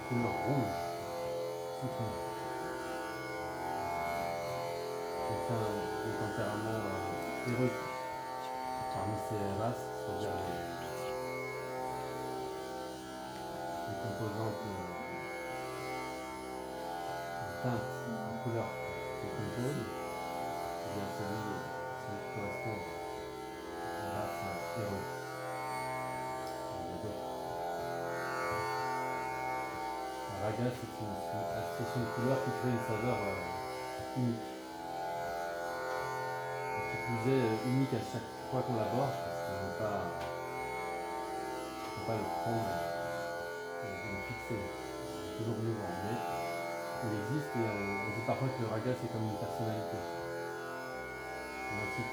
Le Village, Brénaz, France - 2019-05-25 raga de la nuit
raga de la nuit, avec mohan shyam